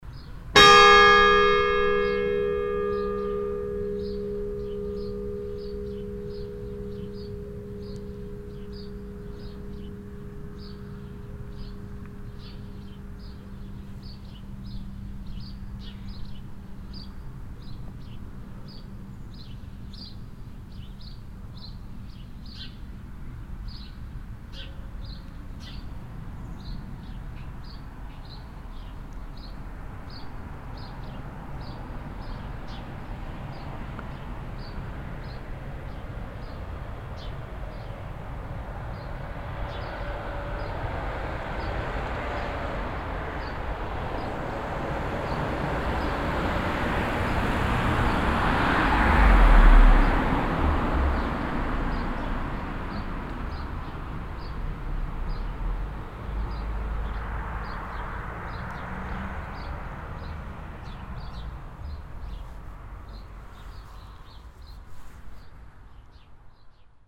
stolzembourg, church, bell, street
At the old church from 1761 of Stolzembourg. The church bell and passing traffic on the nearby road.
It's half past nine on a mellow summer morning.
Stolzembourg, Kirche, Glocke, Straße
Bei der alten Kirche aus dem Jahr 1761 in Stolzemburg. Die Kirchenglocke und Verkehrsgeräusche von der nahe gelegenen Straße. Es ist halb zehn an einem freundlichen Sommermorgen.
Stolzembourg, église, cloches, rue
A la vieille église de Stolzembourg, construite en 1761. La cloche de l’église et le trafic sur la route proche.
Il est 9h30, un doux matin d’été.
Project - Klangraum Our - topographic field recordings, sound objects and social ambiences